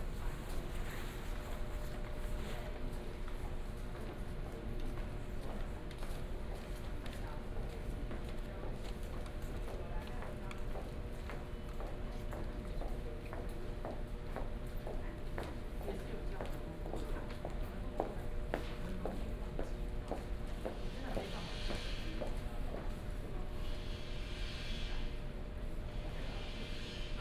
in the station platform, Station information broadcast, The train passed